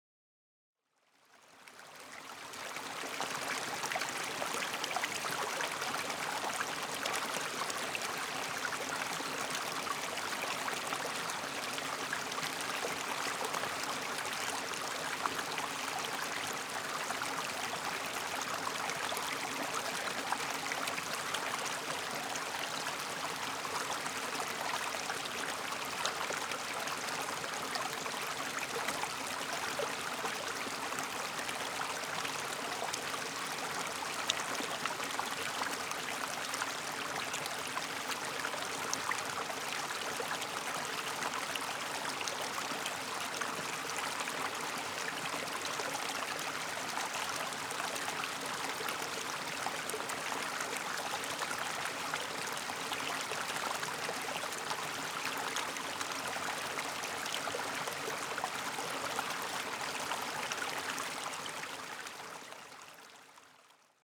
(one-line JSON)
{"title": "Walking Holme downstream", "date": "2011-04-18 11:44:00", "description": "The stream winds its way to a small stone bridge", "latitude": "53.54", "longitude": "-1.84", "altitude": "348", "timezone": "Europe/London"}